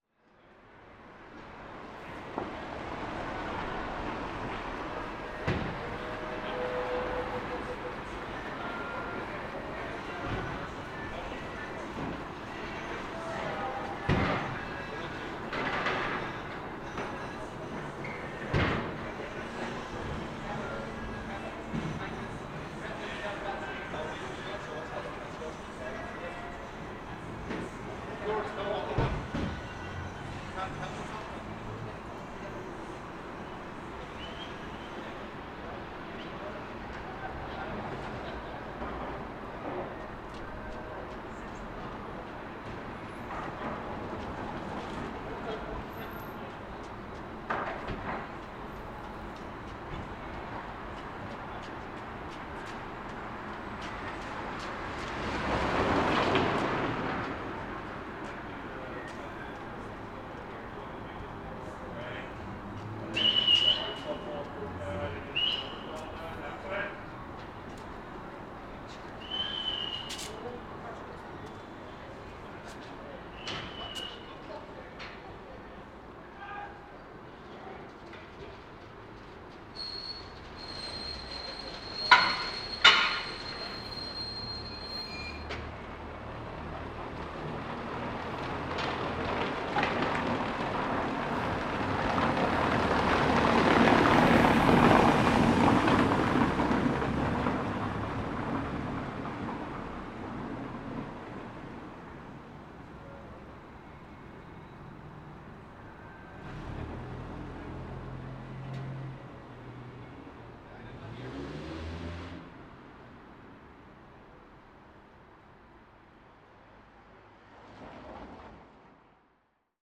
Hill St, Belfast, UK - Cathedral Quarter
Recording in front of two bars which are now closed/closing (Dirty Onion – closed and Thirsty Goat - closing), there is soft music from Thirsty Goat and local pedestrian/vehicle movement. Beginning of Lockdown 2 in Belfast.